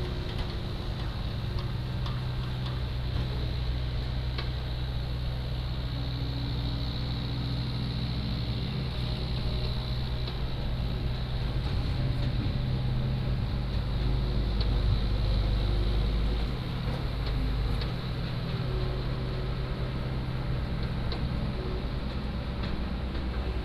Bulldozer, cleaned sand, Small village, Sound of the waves